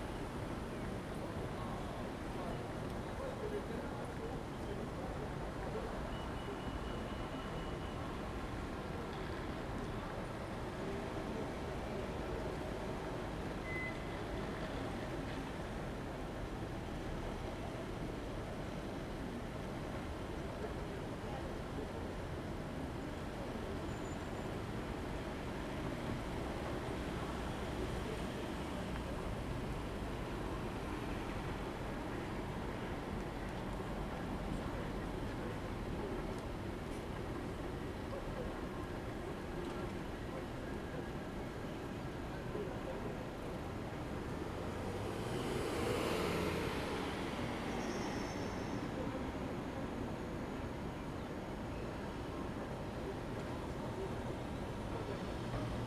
Place de la Gare Grenoble evening curfew - Place de la Gare Grenoble evening curfew=sundscape
"Place de la Gare, Grenoble, evening curfew in the time of COVID19": Soundscape.
Chapter 172-bis (add on august 18 2022) of Ascolto il tuo cuore, città. I listen to your heart, city
Thursday, June 3rd, 2021: recording from hotel room window in front of the Grenoble railway station during evening curfew. Almost than one year and four months after emergency disposition due to the epidemic of COVID19.
Start at 9:31 p.m. end at 9:52 p.m. duration of recording 21’20”